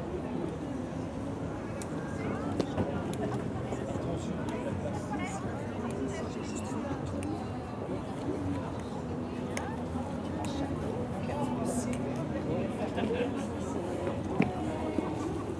Near the Chrysalis store, an automatic Santa-Claus broadcasts a small music every time somebody enters. It's like an horror film, with killer dolls. Frightening ! After I have a small walk in the Christmas market, during a very cold afternoon.